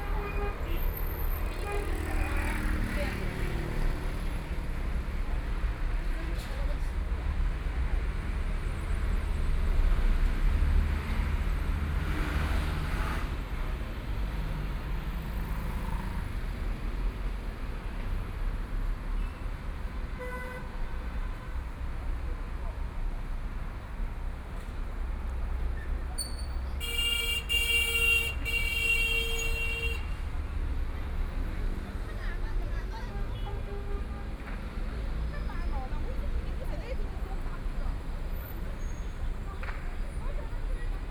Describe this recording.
Traffic Sound, Ambulance warning sound, Binaural recording, Zoom H6+ Soundman OKM II